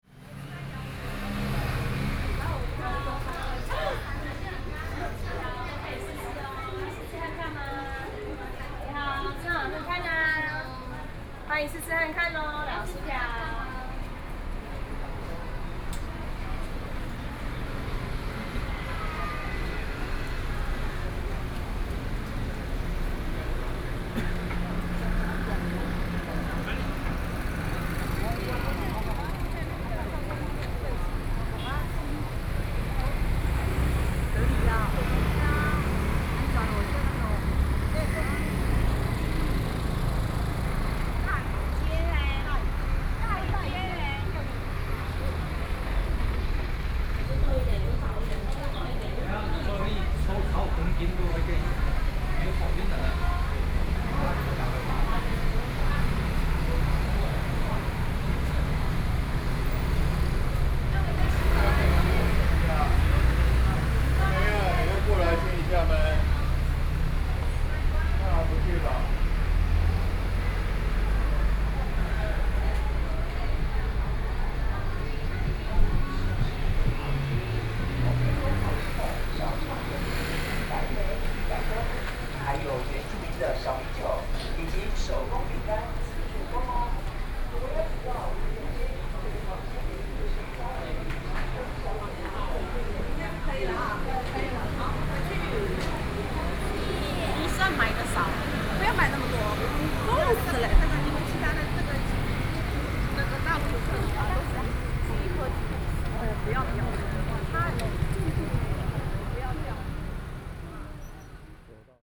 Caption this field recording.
walking on the Road, Various shops voices, Tourists, Traffic Sound